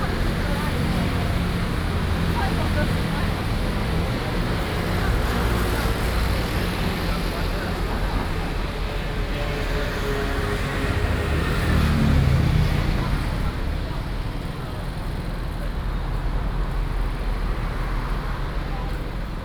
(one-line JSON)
{"title": "Ziyou Rd., North Dist., Taichung City - Walking on the road", "date": "2016-09-06 17:14:00", "description": "Walking on the road, Traffic Sound", "latitude": "24.14", "longitude": "120.68", "altitude": "93", "timezone": "Asia/Taipei"}